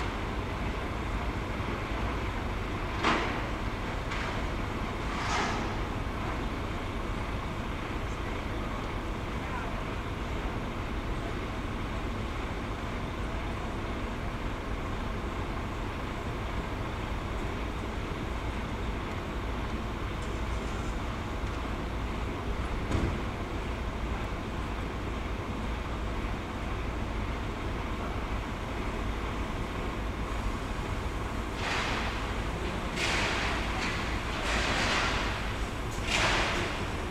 Hirtenstraße, München, Deutschland - from the balcony, at night, in the street cars are unloaded from a transporter